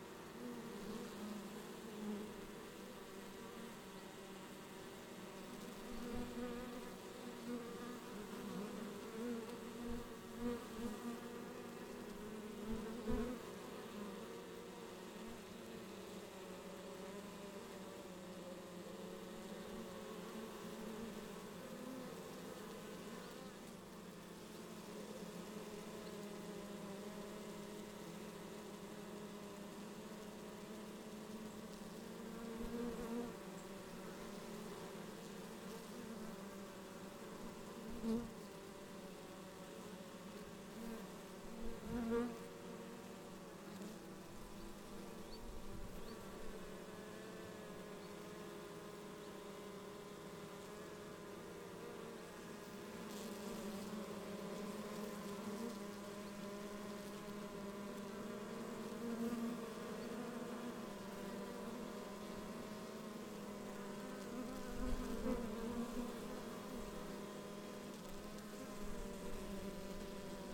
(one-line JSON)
{"title": "Saint-André, La Hoguette, France - La Hoguette - Abbaye dr St-André en Gouffern", "date": "2020-09-09 10:00:00", "description": "La Hoguette - Calvados\nAbbaye de St-André en Gouffern\nLes ruches", "latitude": "48.86", "longitude": "-0.15", "altitude": "147", "timezone": "Europe/Paris"}